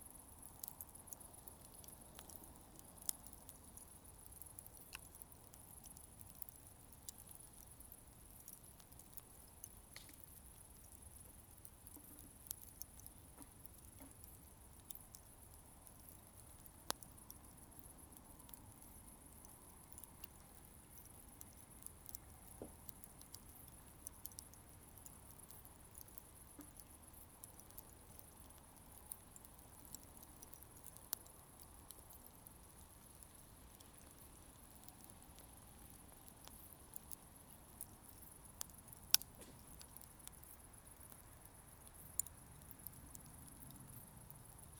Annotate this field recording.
A campfire in a forest near Stockholm. Crickets chirping. Recorded with Zoom H2n, 2CH, handheld.